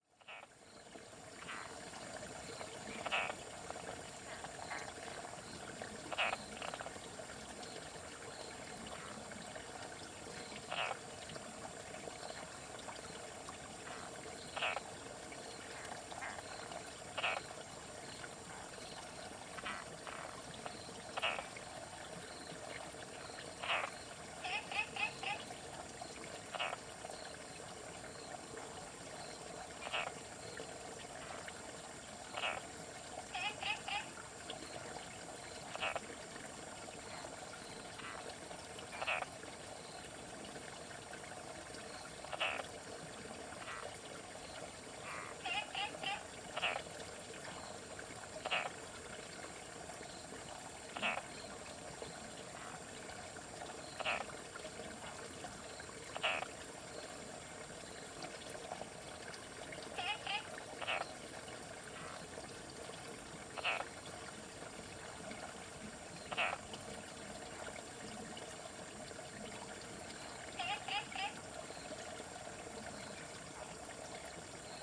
Coffee Maternal grandmother, Puli, Taiwan - Night
First experience.
Zoon H2n MX+XY (2015/8/31 001), CHEN, SHENG-WEN, 陳聖文